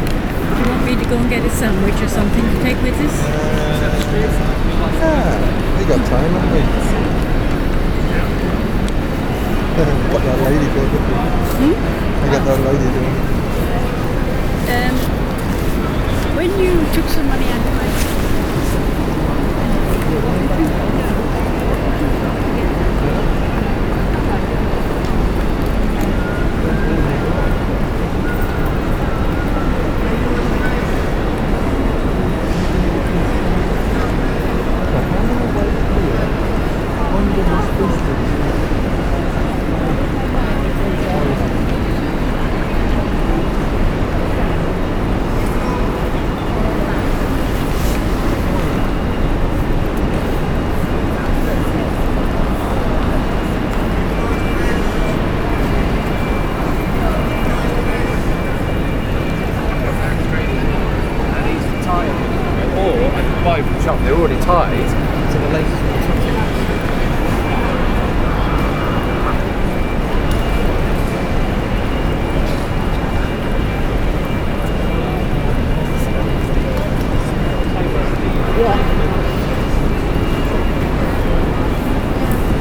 {"title": "The busy Main Concourse at Paddington Station, London, UK - Paddington Concourse", "date": "2020-03-05 14:59:00", "description": "The many sounds from the main concourse of this this very busy railway station. MixPre 6 II with 2 x Sennheiser MKH 8020s.", "latitude": "51.52", "longitude": "-0.18", "altitude": "31", "timezone": "Europe/London"}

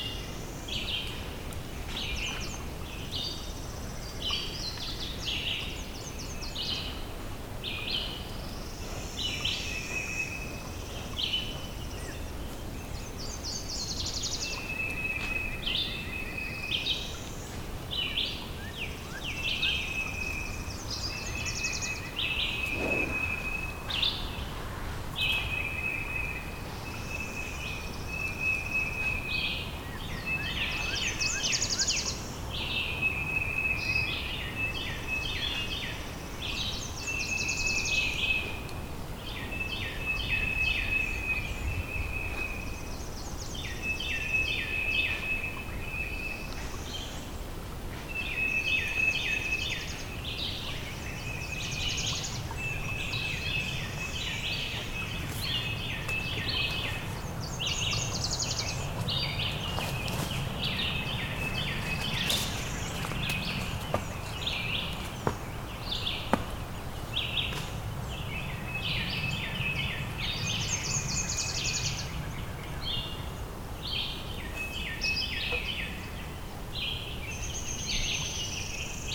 {"title": "Missouri, USA - Two cows and birds in the woods in Missouri", "date": "2013-05-07 12:00:00", "description": "Encounter with two cows in the wood in Missouri, USA. Birds are singing. Sound recorded by a MS setup Schoeps CCM41+CCM8 Sound Devices 788T recorder with CL8 MS is encoded in STEREO Left-Right recorded in may 2013 in Missouri, USA.", "latitude": "37.76", "longitude": "-93.41", "altitude": "255", "timezone": "America/Chicago"}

